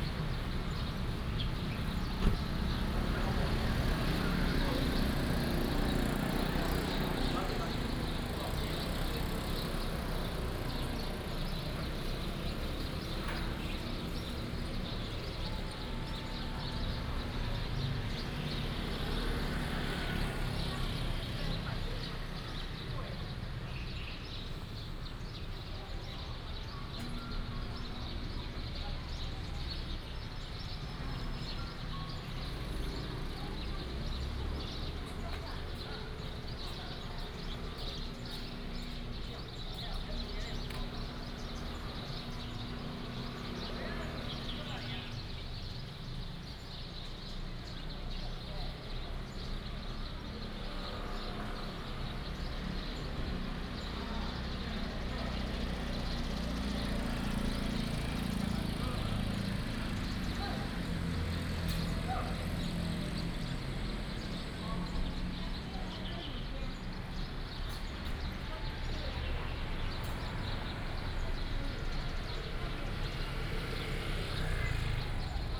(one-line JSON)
{"title": "Guangwen Rd., Ershui Township 彰化縣 - Square outside the station", "date": "2018-02-15 09:08:00", "description": "Square outside the station, lunar New Year, Traffic sound, Bird sounds\nBinaural recordings, Sony PCM D100+ Soundman OKM II", "latitude": "23.81", "longitude": "120.62", "altitude": "86", "timezone": "Asia/Taipei"}